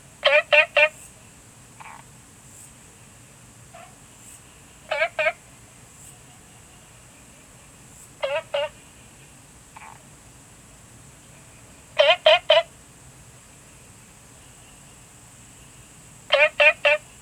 青蛙ㄚ婆ㄟ家, 桃米里, Taiwan - Small ecological pool
Frogs chirping, Small ecological pool
Zoom H2n MS+XY